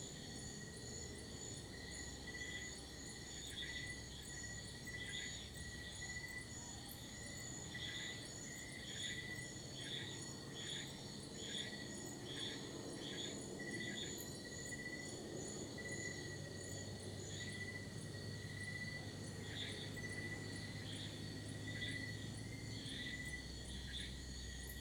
Sounds captured just before midnight by the valley along Calamba Road between Tagaytay Picnic Grove and People´s Park in the Sky. Birds, insects, lizards along with occasionally some tricycles, motorbikes and dogs barking. WLD 2016